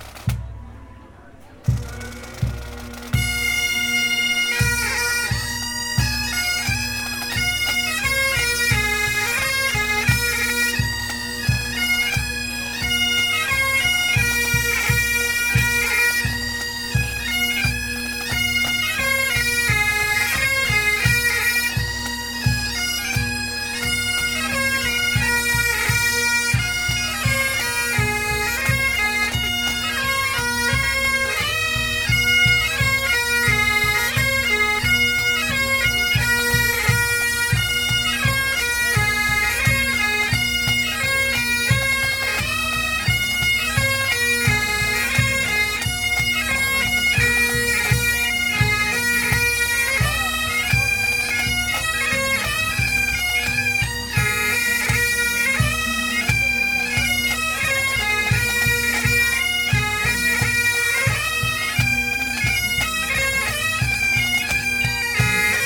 Mons, Belgium - K8strax race - Pipe players
The k8strax race manager made a big surprise, he invited some pipe players. The band is Celtic Passion Pipe Band. What a strange thing to see these traditional players, between thousand of young scouts !
21 October 2017, 17:40